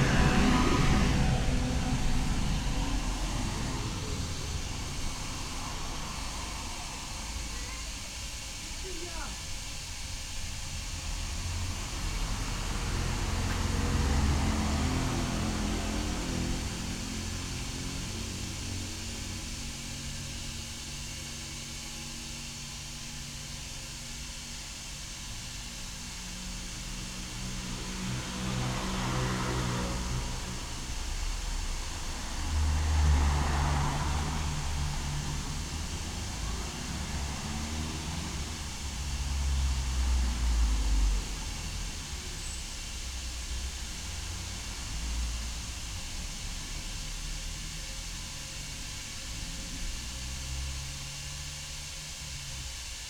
Av. R.Poincaré, Aix-en-Provence, Fr. - buzzing gas station
hum of a sort of gas station at Avenue Raimond Poincaré, silence after traffic...
(Sony PCM D50, EM172)